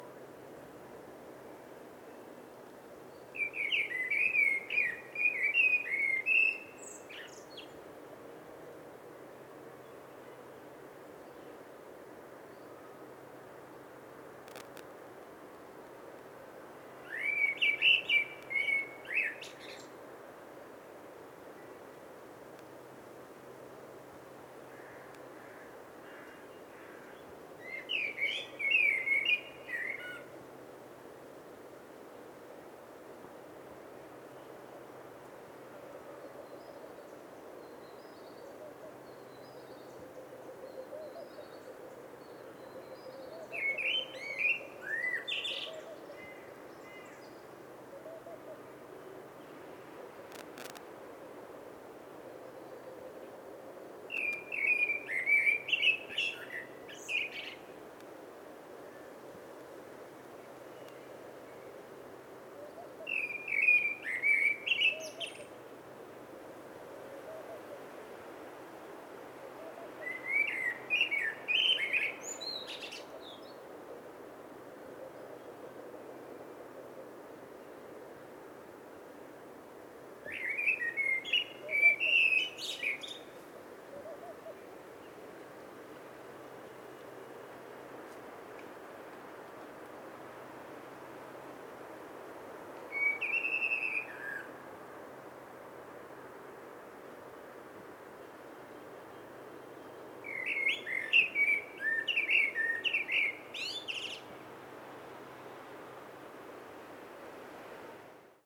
Birds, Hebrew University, Mount Scopus.
25 March 2019, 15:30